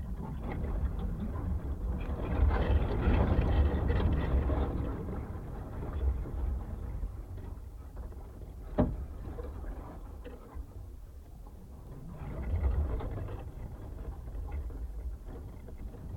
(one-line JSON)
{"title": "Vyžuonos, Lithuania, old barbed wire", "date": "2019-11-16 12:30:00", "description": "coiled rusty barbed wire on earth, probably even from soviet times...contact microphones", "latitude": "55.57", "longitude": "25.52", "altitude": "97", "timezone": "Europe/Vilnius"}